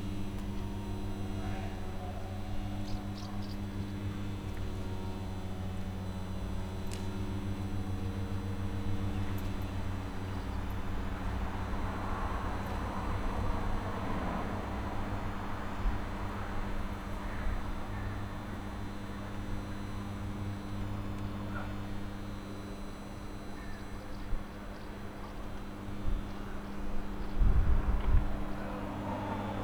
Zátopkova, Praha, Czechia - Sunday at the Stadion
Martins and ravens, electromagnetic resonance, shouting soccer players.. passing car.. summer sunday melancholy at the desolated empty Strahov stadion.
Praha, Česká republika, July 2020